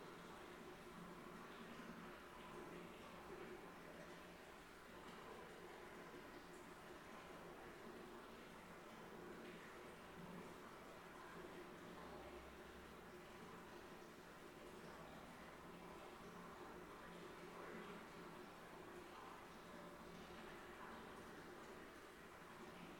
Abbaye de Clairefontaine, Arlon, België - Clairefontaine Crypt
Sound of the source Saint Bernard as heard from the crypt of the abbaye de Clairefontaine.
Arlon, Belgium, 11 February 2019, 13:01